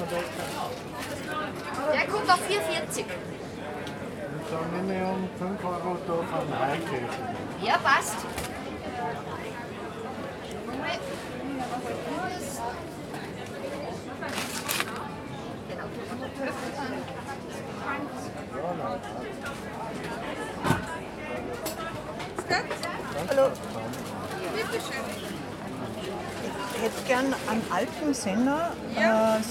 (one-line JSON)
{"title": "Haydnstraße, Salzburg, Österreich - Schranne Salzburg 2", "date": "2021-07-08 09:56:00", "description": "Wochenmarkt in Salzburg, jeden Donnerstag. Weekly market in Salzburg, every Thursday", "latitude": "47.81", "longitude": "13.04", "altitude": "430", "timezone": "Europe/Vienna"}